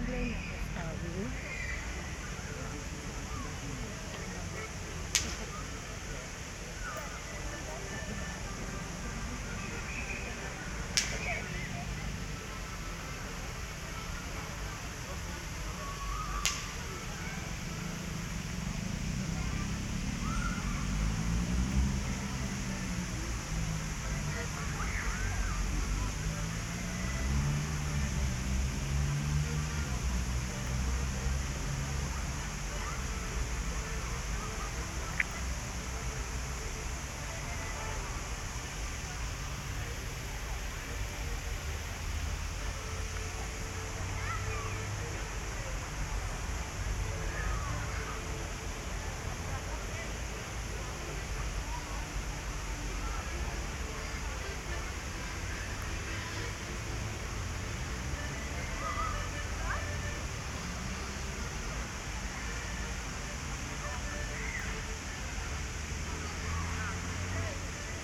Fountains at Krynica-Zdrój, Polska - (648 BI) walk around fountains and further
Walk around fountains and further down the park.
Recorded with DPA 4560 on Sound Devices MixPre6 II.